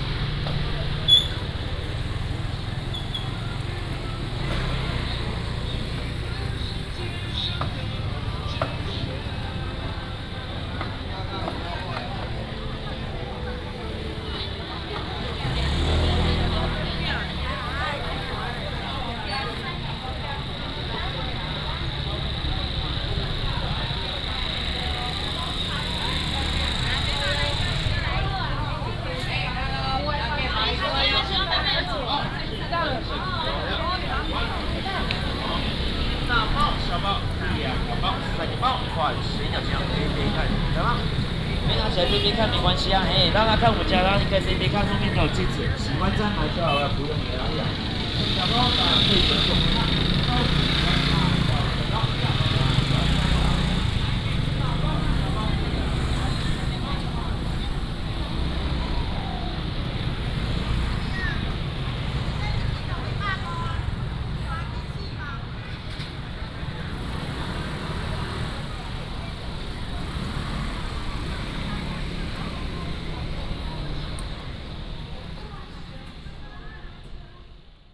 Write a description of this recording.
Walking in the market, Shopping Street